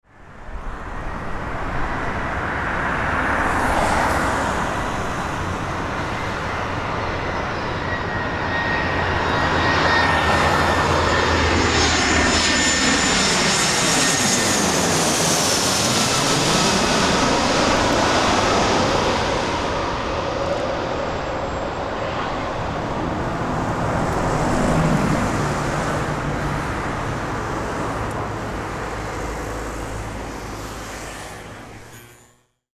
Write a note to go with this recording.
a landing plane flying over and traffic passing by.